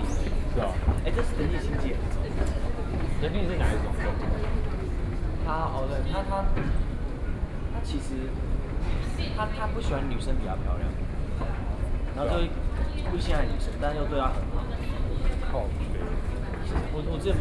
soundwalk in the Zhongxiao Fuxing Station, Sony PCM D50 + Soundman OKM II